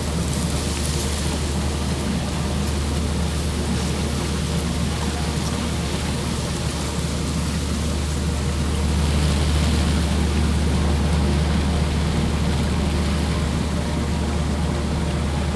Kopisty, Česká republika - sewage plant of power plant
the sounds of the machinery next to the building where are cleaned the ash waters running from the chemopetrol factory Zaluží
Růžodol, Litvínov, Czech Republic, 2 December, ~11:00